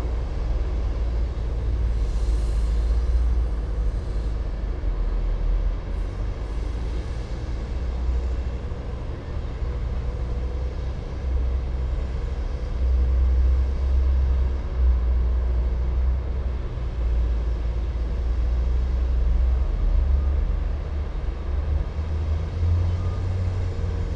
different excavators, bulldozers and trucks during earthwork operations
april 11, 2016